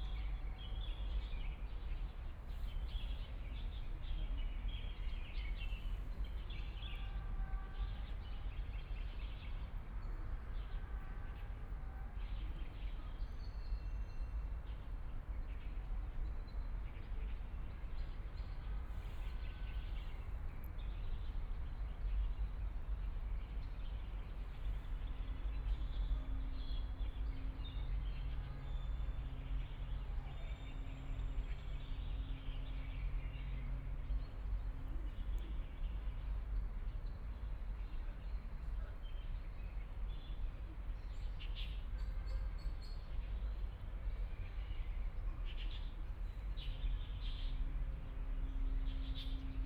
Birdsong, Aircraft flying through, Distant ship whistles, Binaural recording, Zoom H6+ Soundman OKM II ( SoundMap20131122- 2 )
22 November, 16:32